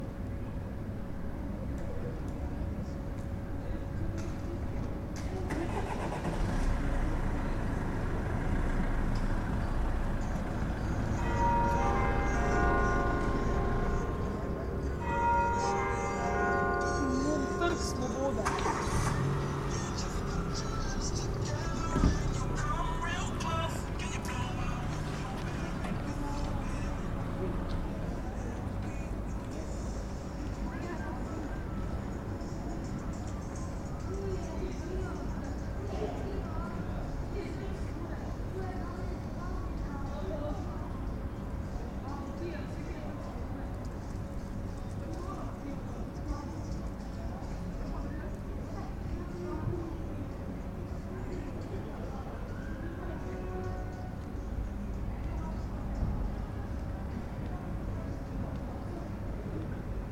Škofa Maksimiljana Držečnika, Partizanska cesta, Maribor, Slovenia - corners for one minute
one minute for this corner: Škofa Maksimiljana Držečnika and Partizanska cesta